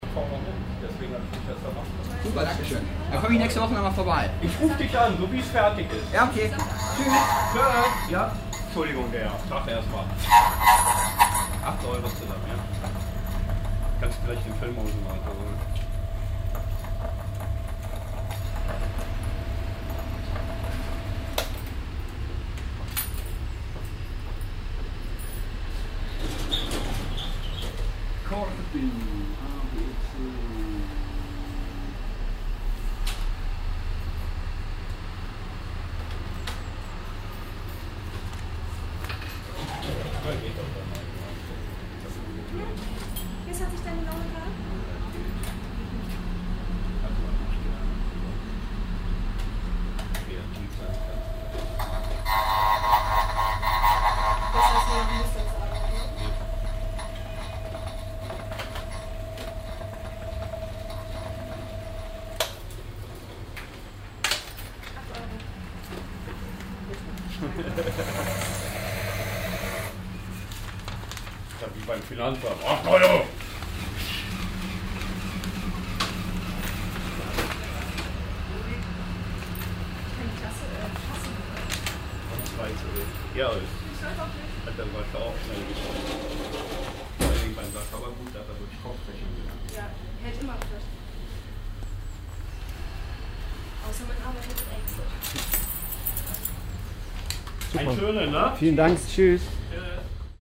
cologne, chlodwigplatz, schlüsselanfertigung
soundmap: köln/ nrw
schluesselanfertigung, kundengespräch und maschinengeräusch, im hintergrund baugeräusche vom chlodwigplatz
project: social ambiences/ listen to the people - in & outdoor nearfield
5 June, 20:39